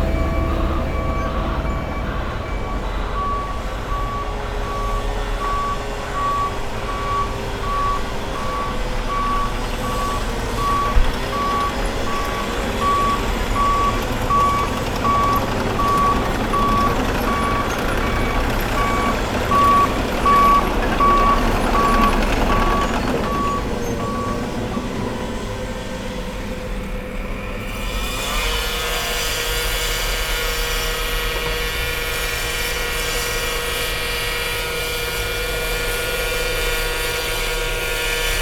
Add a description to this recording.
Men and machines resurfacing the road. Recorded with a Sound devices Mix Pre 6 II and 2 Sennheiser MKH 8020s